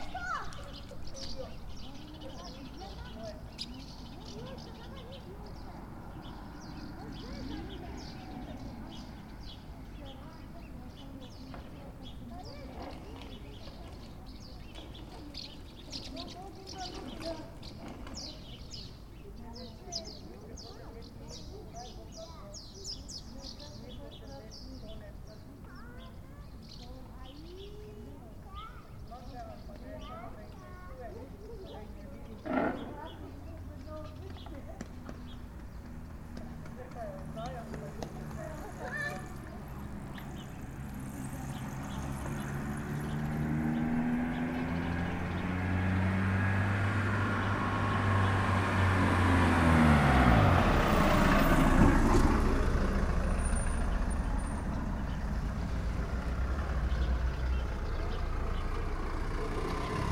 Meljska cesta, Maribor, Slovenia - corners for one minute
one minute for this corner: Meljska cesta 86
20 August 2012, ~20:00